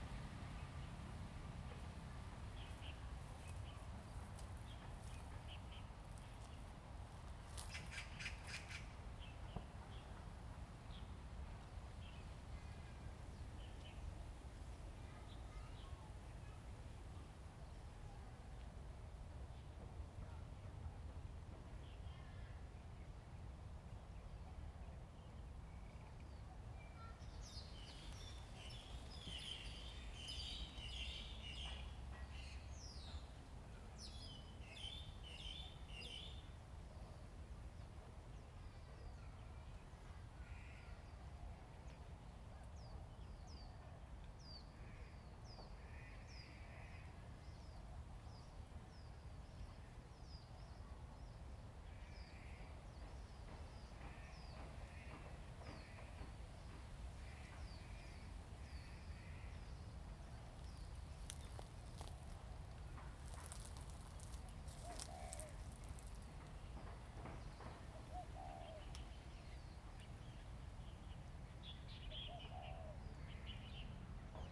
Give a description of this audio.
Birds singing, Wind, In the woods, Aircraft flying through, Zoom H2n MS+XY